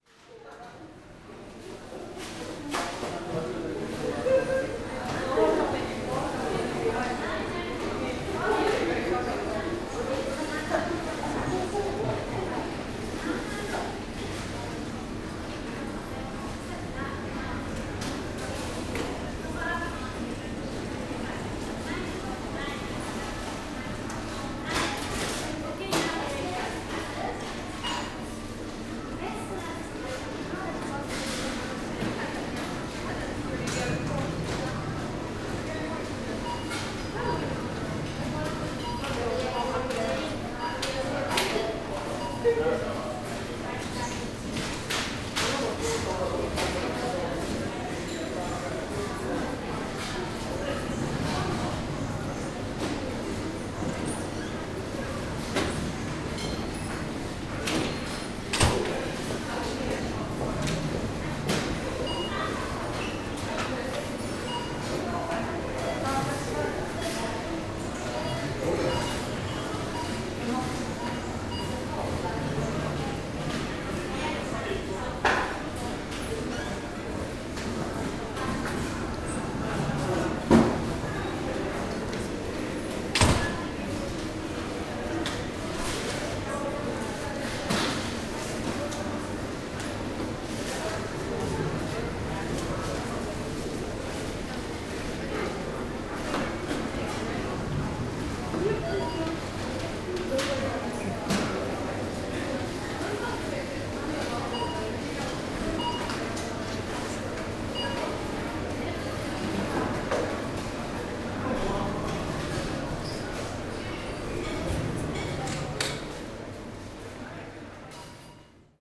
The checkout area of the sopping center "City Center" in the early afternoon.